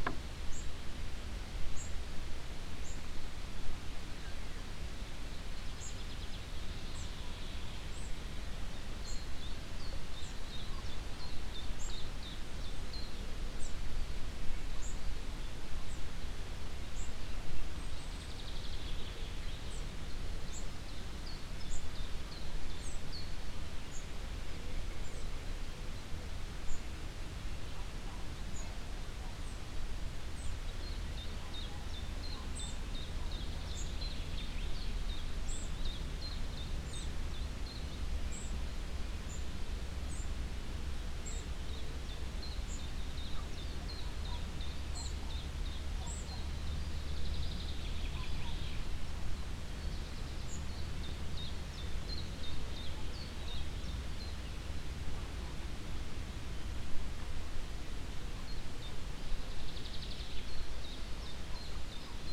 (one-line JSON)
{"title": "Aukštadvario seniūnija, Litauen - Lithuania, countryside, at lake", "date": "2015-07-05 08:00:00", "description": "In the morningtime on a mild sunny summer day at a lake. The sounds of the morning birds, wind waves crossing the lake and mving the reed, a plane crossing the sky.\ninternational sound ambiences - topographic field recordings and social ambiences", "latitude": "54.62", "longitude": "24.65", "altitude": "156", "timezone": "Europe/Vilnius"}